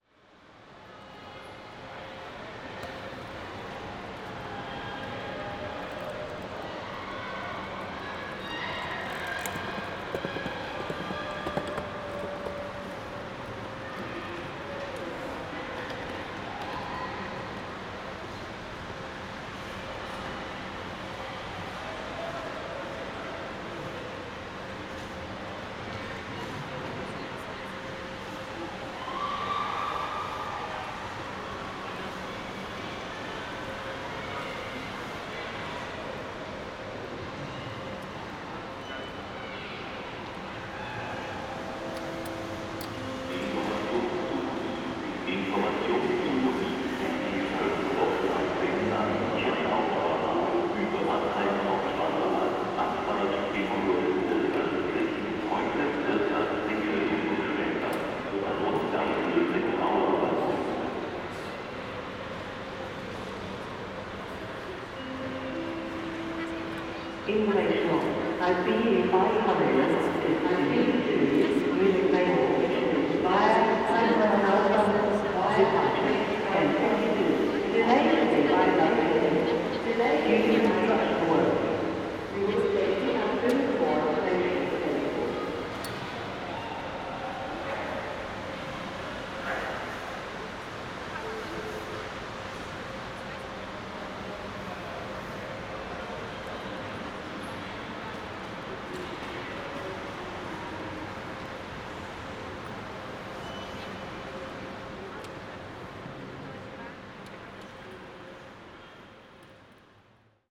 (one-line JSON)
{"title": "The Squaire, Frankfurt am Main, Deutschland - Anthrophonies: Frankfurt Flughafen-Fernbahnhof", "date": "2022-04-30 11:10:00", "description": "An example of Anthrophony: All sounds created by humans e.g., talking, car horns, construction machines, aircrafts…. etc.\nDate: 30.04.2022.\nTime: Between 10 and 11 AM.\nRecording Format: Binaural.\nRecording Gear: Soundman OKM into ZOOM F4.\nWe also have a focus in Multimedia Installations and Education.", "latitude": "50.05", "longitude": "8.57", "altitude": "115", "timezone": "Europe/Berlin"}